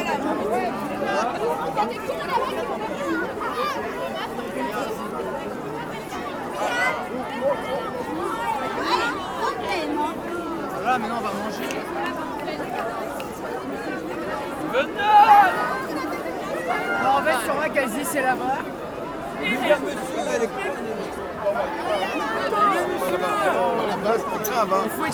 Centre, Ottignies-Louvain-la-Neuve, Belgique - A film called tomorrow
The 750 students of St-Jean Baptist college in Wavre went to see a film called "tomorrow", for a sustainable development. On the main place of this city, they make an "holaa" dedicate to the planet.
Ottignies-Louvain-la-Neuve, Belgium